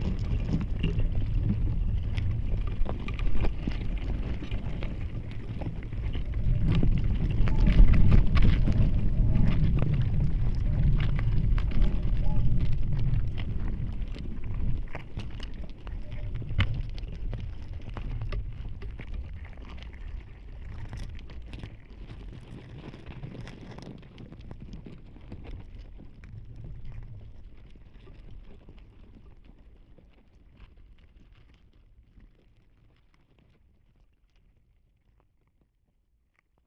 Wyspa Sobieszewska, Gdańsk, Poland - Mrowisko
Mrowisko / Stołówka Drogowiec - mikrofony kontaktowe.